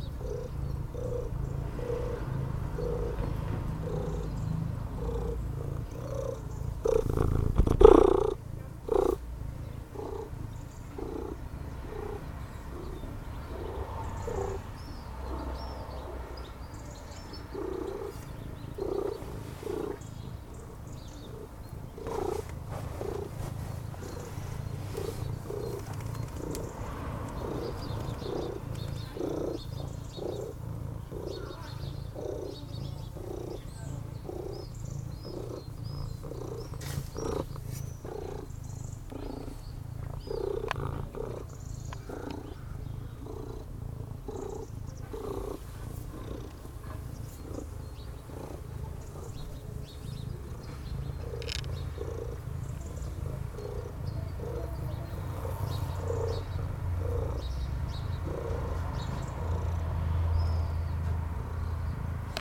Pirovac, Ulica Augusta Cesarca, Kroatien - Purring cat
The neighbours tomcat purring, scratching on a wooden door, some birds, a car passing by, neighbours cleaning their terrasse